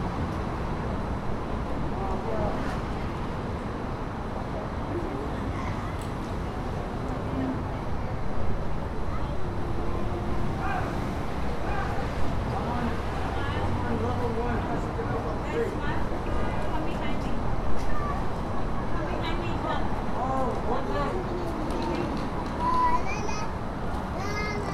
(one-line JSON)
{"title": "Terminal B, Newark, NJ, USA - Airport Pickup Zone", "date": "2022-09-03 16:58:00", "description": "Waiting for a ride back to a family member's house after a missed flight. Every part of the Newark airport was packed due to labor day weekend, including the pickup zone. People are heard walking by with their luggage as cars move from left to right in front of the recorder.\n[Tascam Dr-100mkiii onboard uni mics]", "latitude": "40.69", "longitude": "-74.18", "altitude": "2", "timezone": "America/New_York"}